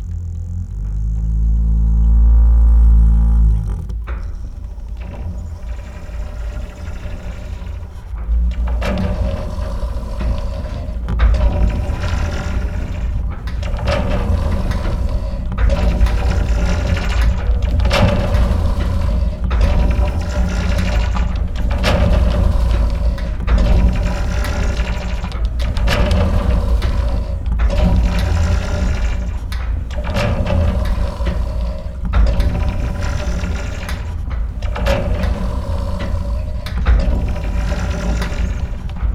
Arivaca Desert (Arizona) - Windmill screaming in the desert
A windmill in the desert of Arizona is screaming while the wind is coming. Those windmills are used to pump water, in order to give water to cattle or wild animals for hunting. The screaming is produced naturally by the central mast on a piece of wood (part of the windmill).
Recorded during a scouting for an upcoming sound art project in Arizona (to be done in 2022).
Many thanks to Barry, Mimi and Jay for their help.
Recorded by a Sound Devices MixPre6
With a MS Schoeps Setup CCM41 + CCM8 in a Zephyx windscreen by Cinela, and a Geofon by LOM (for the metallic sounds)
Sound Ref: AZ210816T005
Recorded on 16th of August 2021
GPS: 31.625619, -111.325112